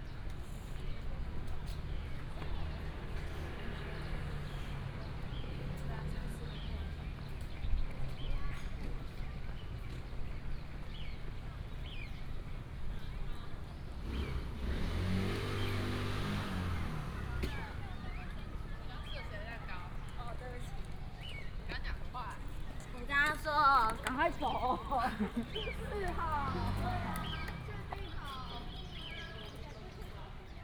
重慶公園, Banqiao Dist., New Taipei City - Walking through the park
Walking through the park, Traffic sound, Child, sound of the birds